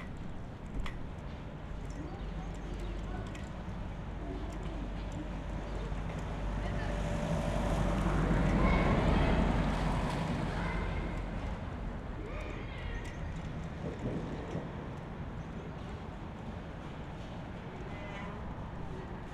Liebensteinerstr., Marzahn, Berlin, Deutschland - flagpol at supermarket, ambience
Berlin Marzahn, residential area, street ambience on a sunday afternoon, a flag pol squeaks, some distant voices
(SD702, AT BP4025)